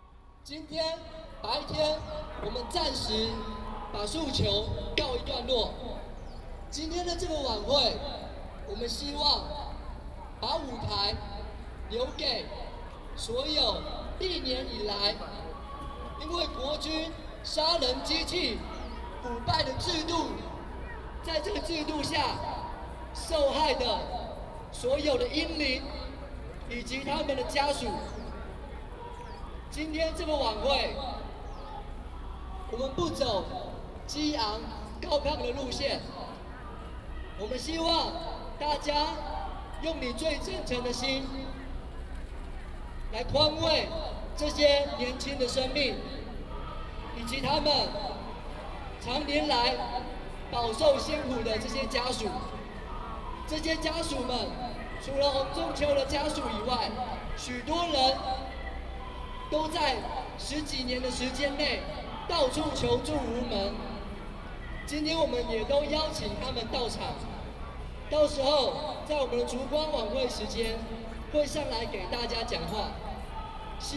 Jinan Road, Legislature - speech
Protest party, A young soldier deaths, Zoom H4n+ Soundman OKM II
中正區 (Zhongzheng), 台北市 (Taipei City), 中華民國, 20 July 2013, 18:25